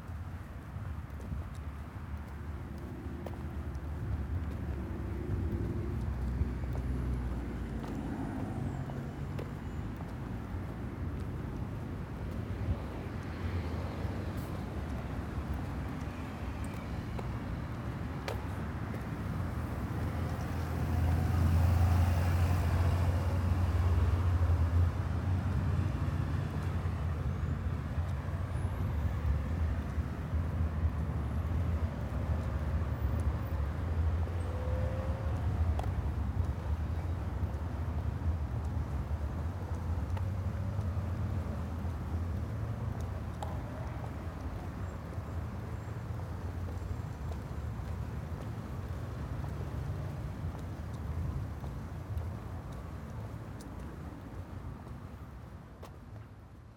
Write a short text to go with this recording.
This is the sound of the underpass which travels beneath the busy ring road around Oxford. The underpass is favoured by cyclists who cannot safely cross the insanely busy A road above, and by pedestrians. You can hear the parallel stacks of traffic; the heavy cars and lorries above and the delicate bicycle pings and rattles below. You can also hear the recorder bouncing a bit on me as I walked, and the unsatisfactory clicks of my holding the little Naiant X-X microphones I used in my little woolly mittens. Must make a better/quieter rig for those.